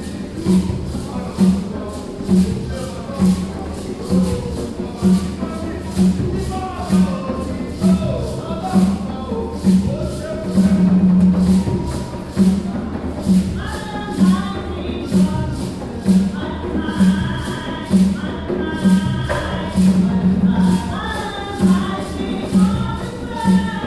Christuskirche, Hamm, Germany - Blessing the Afrika Festival...
… we are at the community hall of a Lutheran church, the “Christuskirche”, in Hamm West… a large very colourful audience is gathered here… many in African attire… inside the hall, the opening of the Afrika Festival is reaching its peak… Yemi Ojo on the drum performs a traditional Yoruba blessing for this day… two women pick up and join in with “native” and Christian African songs, Yvonne Chipo Makopa and Godsglory Jibrill-ellems… it’s the Yes Afrika Festival 2014…
27 September 2014, ~11:00